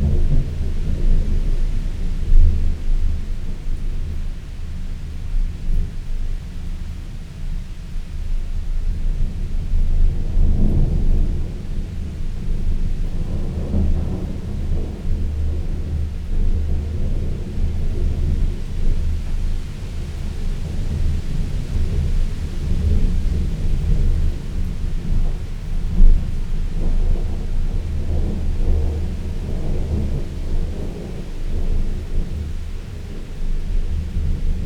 Luttons, UK - inside church porch ... outside thunderstorm ...
inside church porch ... outside thunderstorm ... open lavalier mics on T bar on mini tripod ... background noise traffic and pigs from an adjacent farm ... which maybe a bit off putting ... bird calls ... blue tit ... wood pigeon ...
26 July 2018, ~18:00